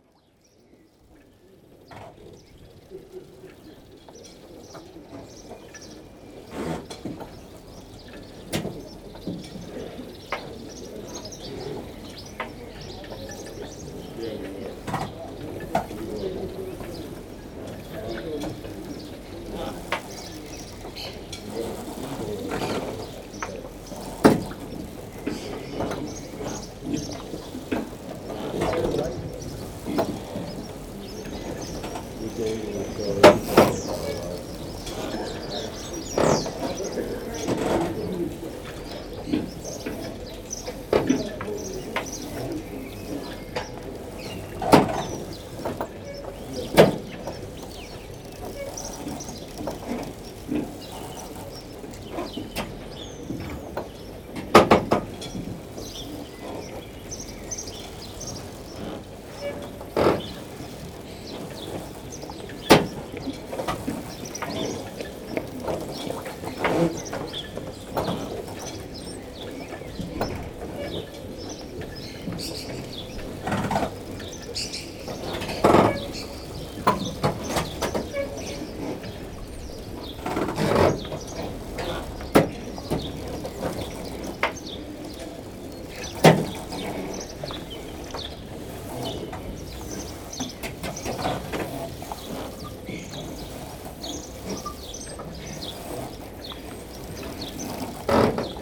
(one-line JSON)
{"title": "Saint-Martin-de-Ré, France - The marina", "date": "2018-05-20 07:30:00", "description": "The very soft sound of the marina during a quiet low tide, on a peaceful and shiny sunday morning.", "latitude": "46.21", "longitude": "-1.37", "altitude": "2", "timezone": "Europe/Paris"}